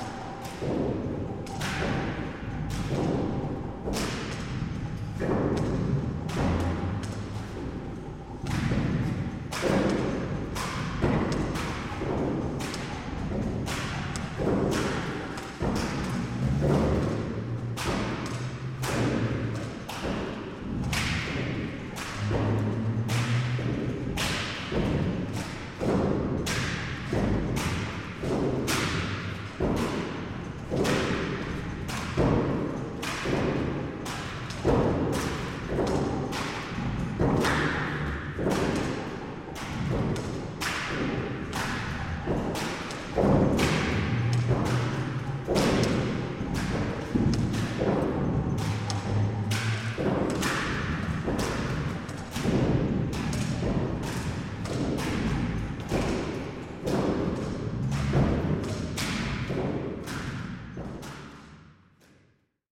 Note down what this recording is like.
sound action in the empty cooler room at the former seafood market of Calgary